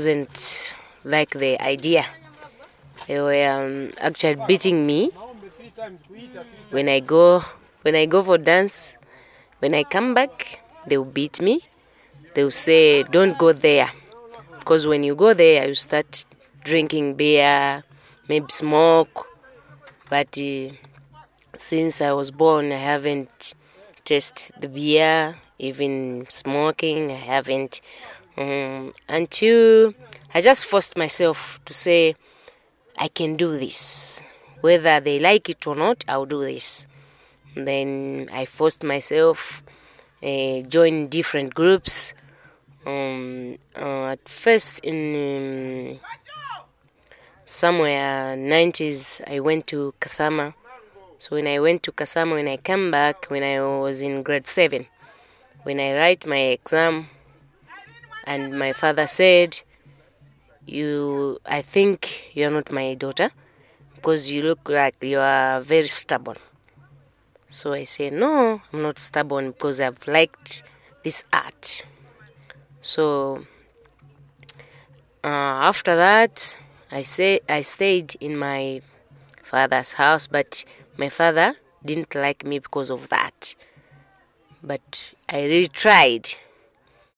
Old Independence Stadium, Lusaka, Zambia - Tasila Phiri – I can do this…
…we continued in Mary’s friendly car, making a recording with Tasila Phiri, a dancer, choreographer and trainer member of ZAPOTO. Like Mary, Tasila is based at Kamoto Community Arts and often collaborates with Mary in their projects. Here she tells, how things started for her…